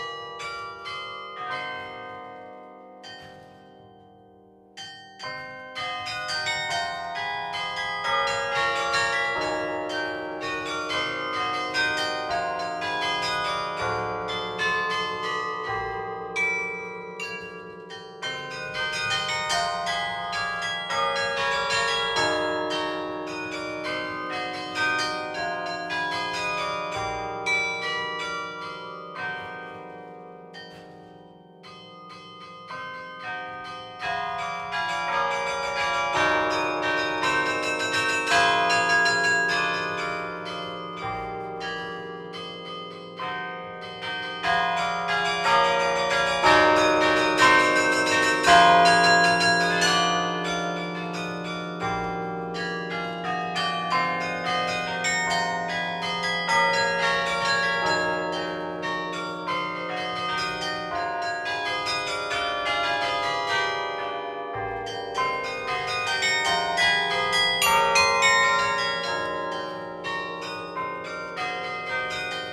Carillon de l'abbatiale de St-Amand-les-Eaux - Abbatiale de St-Amand-les-Eaux
Abbatiale de St-Amand-les-Eaux
Maître carillonneur : Charles Dairay